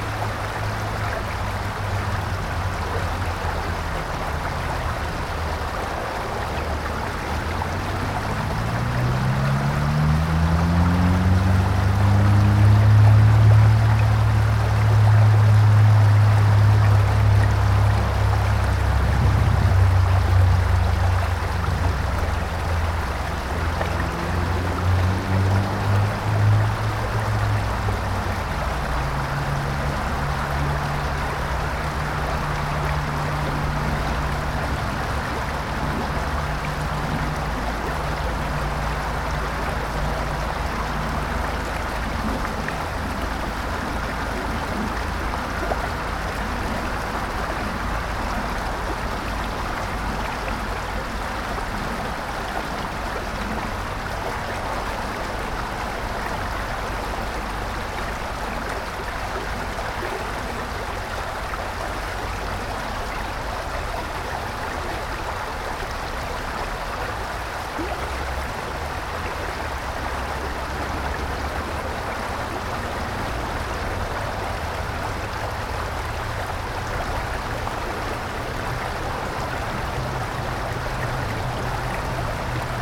Cedar Creek Park, Parkway Boulevard, Allentown, PA, USA - Cedar Creek Park (Ott Street)
This was recorded at night next to the creek that runs through Cedar Creek Park. There was no foot traffic but a decent amount of automobile traffic. It was recorded with a Sony recorder.